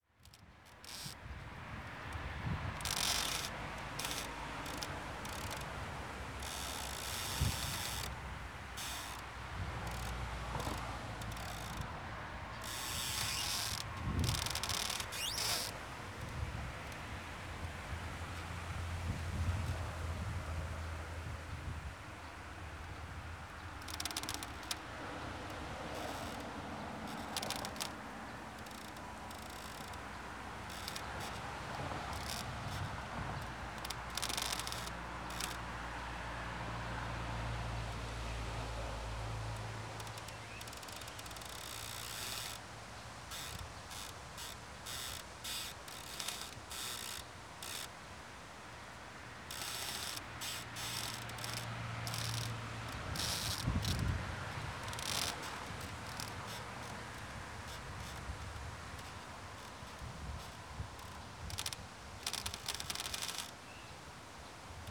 Poznan, Umoltowo, Bronislawa road - elastic fence
a fence made of a thigh, plastic netting squeaking and creaking in the wind.